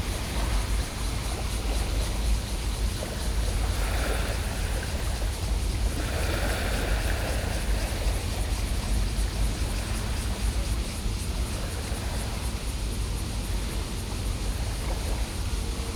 Tamsui River, Wugu Dist., New Taipei City - Tide
Tide, Cicada sounds
Zoom H4n+ Rode NT4
Bali District, New Taipei City, Taiwan